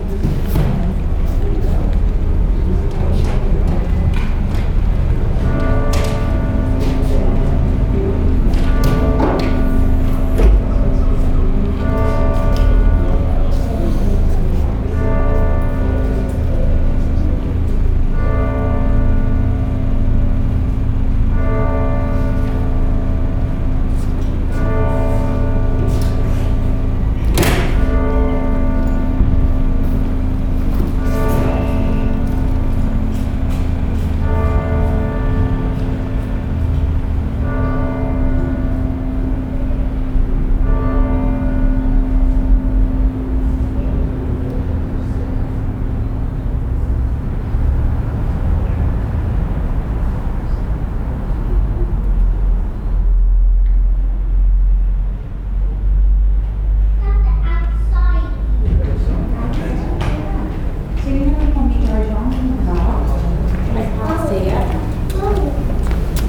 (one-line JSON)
{"date": "2019-07-04 12:00:00", "description": "A short experience of the interior ambience of the cathedral while the Bourdon Bell strikes the noon hour.", "latitude": "52.19", "longitude": "-2.22", "altitude": "27", "timezone": "Europe/London"}